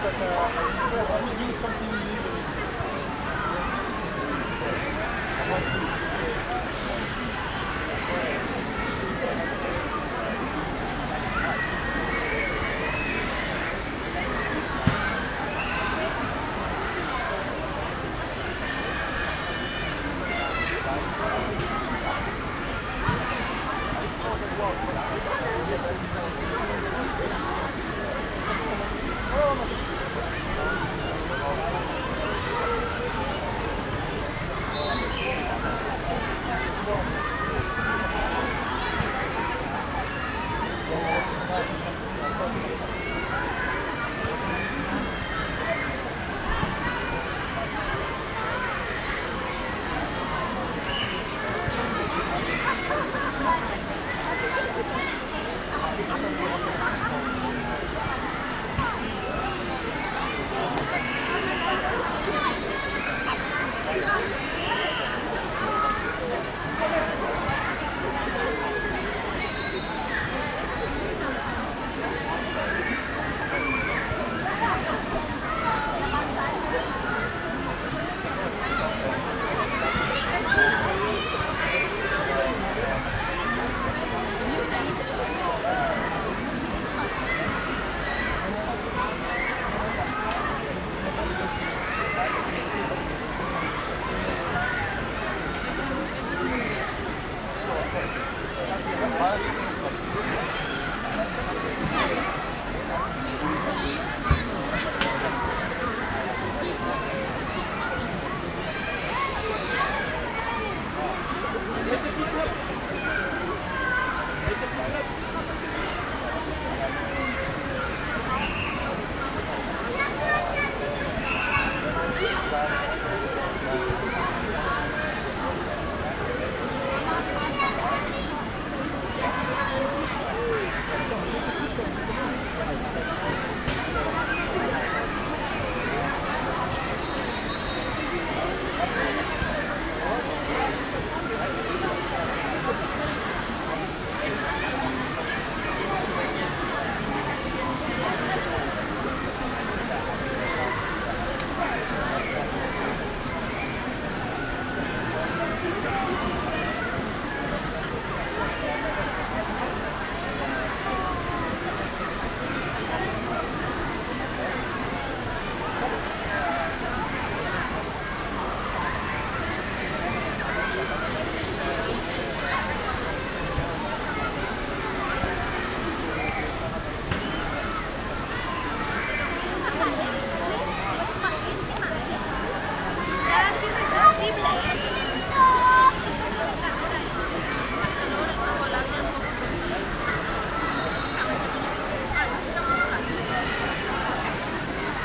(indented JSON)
{
  "title": "Ottignies-Louvain-la-Neuve, Belgique - Bois des Rêves swimming pool",
  "date": "2016-07-10 14:00:00",
  "description": "The Bois des Rêves swimming pool on a very busy sunday afternoon. It's full of people. Recorded with the wind in the woods.",
  "latitude": "50.66",
  "longitude": "4.58",
  "altitude": "70",
  "timezone": "Europe/Brussels"
}